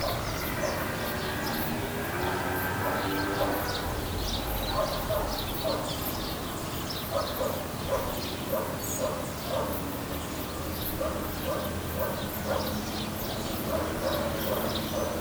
Bahitgul Boutique-Hotel, Bakhchsysaray, Crimea, Ukraine - street dogs, wild birds and other guests - from day to night
11am an 11pm: same place (at svetlana's favourite Tatar hotel), glued together. All plants, animals, weather, honking cars, hotel-guests and radio-programs communicate with one-another. Does the zoom recorder reveal that?